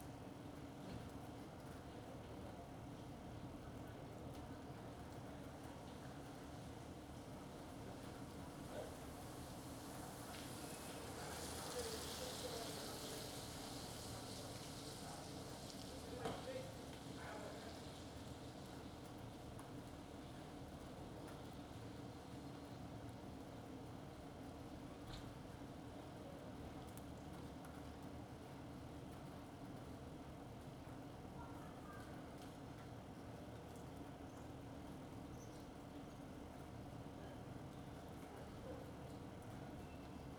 Carrer de Joan Blanques, Barcelona, España - Rain25032020BCNLockdown
Rain field recording made from a window during the COVID-19 lockdown.
25 March 2020, 16:00